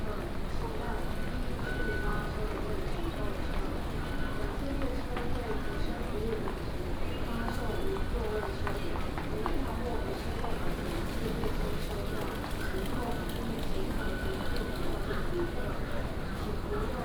In the station lobby
Binaural recordings, ( Proposal to turn up the volume )